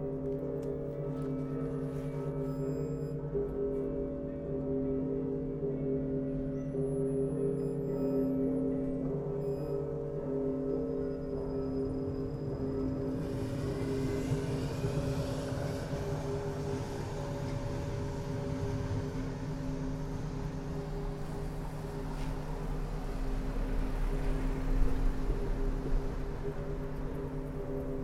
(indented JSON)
{
  "title": "Серпуховская Застава, Москва, Россия - Sitting at a tram station",
  "date": "2020-09-27 13:45:00",
  "description": "Sitting at a tram station and waiting for the tram home. Church bells can be heard as well as cars passing by and some iron screach. Finally my tram comes.",
  "latitude": "55.71",
  "longitude": "37.62",
  "altitude": "129",
  "timezone": "Europe/Moscow"
}